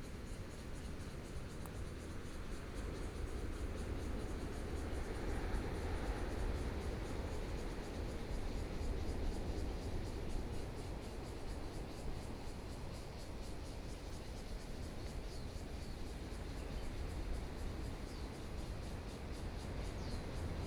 Waves and cicadas, In the woods, The weather is very hot